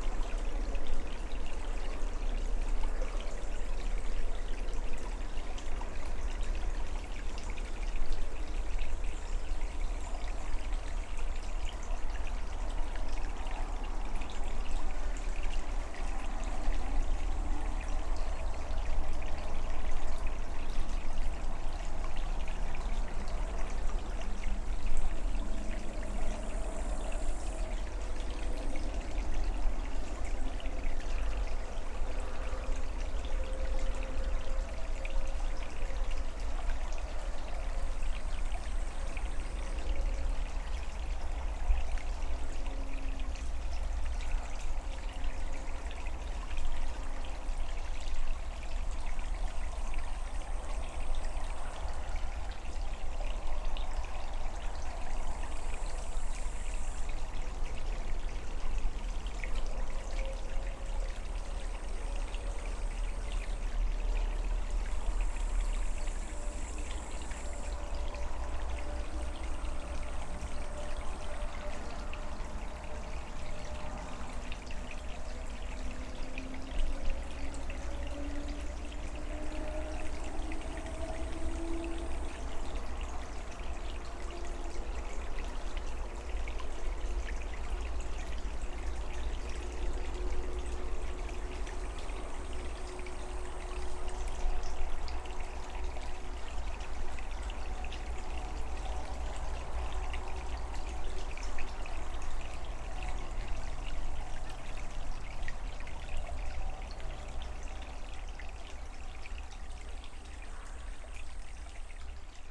little streamlet omthe side of the city